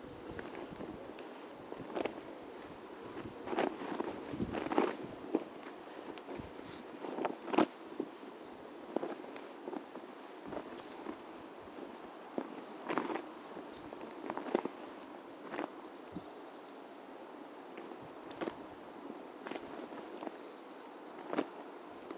{"title": "Birr, Llencols Dansant amb Ocells", "date": "2009-06-28 10:07:00", "description": "Sheets Dancing with Birds", "latitude": "53.09", "longitude": "-7.88", "altitude": "66", "timezone": "Europe/Dublin"}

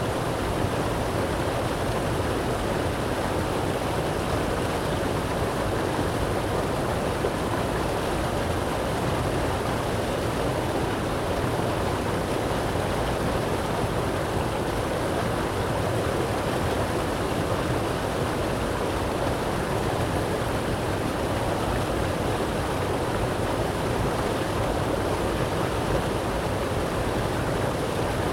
{"title": "Borowskiego, Gorzów Wielkopolski, Polska - Old water dam.", "date": "2020-04-23 15:10:00", "description": "Kłodawka river, the old water dam.", "latitude": "52.74", "longitude": "15.24", "altitude": "28", "timezone": "Europe/Warsaw"}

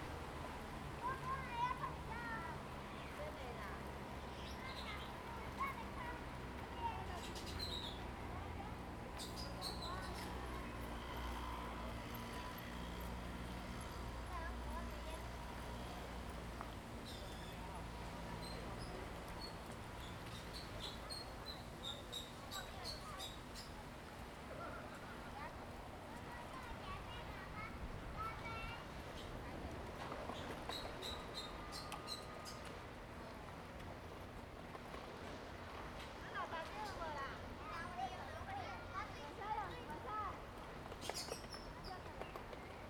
{"title": "漁福漁港, Hsiao Liouciou Island - Small fishing port", "date": "2014-11-01 16:00:00", "description": "Small fishing port, Traffic Sound\nZoom H2n MS+XY", "latitude": "22.35", "longitude": "120.39", "altitude": "7", "timezone": "Asia/Taipei"}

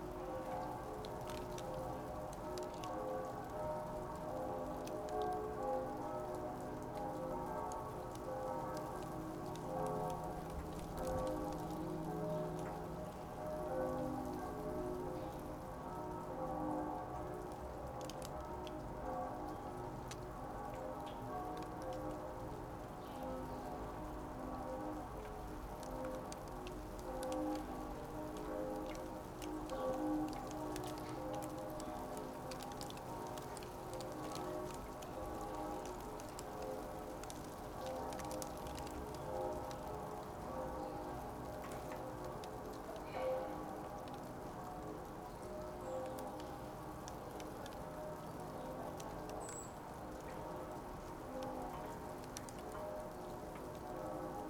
{"title": "Berlin Bürknerstr., backyard window - icy rain and churchbells", "date": "2010-12-24 14:50:00", "description": "temperatures around zero, ice rain starting, christmas church bells", "latitude": "52.49", "longitude": "13.42", "altitude": "45", "timezone": "Europe/Berlin"}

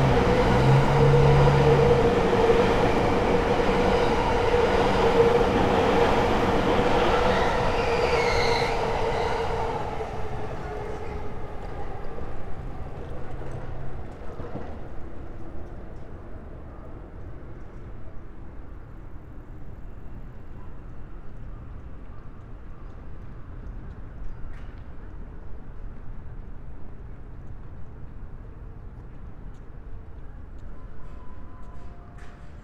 {"title": "near komagome station, tokyo - at sundown", "date": "2013-11-12 16:32:00", "description": "above JR Yamanote Line, beyond train tracks horizon bright autumn sun is descending into the Tokyo's underworlds", "latitude": "35.74", "longitude": "139.75", "altitude": "29", "timezone": "Asia/Tokyo"}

